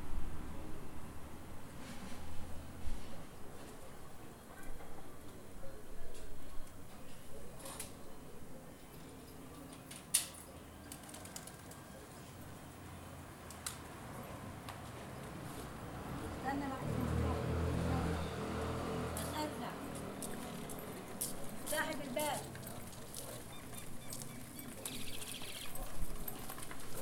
HaTsalvanim St, Acre, Israel - Street, Acre